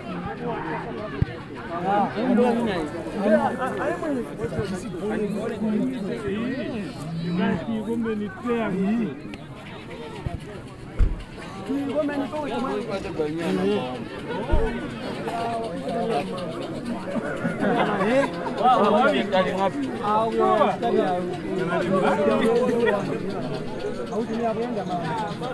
Football pitch, Sinazongwe, Zambia - At the Saturday match....
a match at the local football pitch is a great attraction in the rural community.... especially on Saturday afternoons, this is where you go...
Southern Province, Zambia, 14 July 2018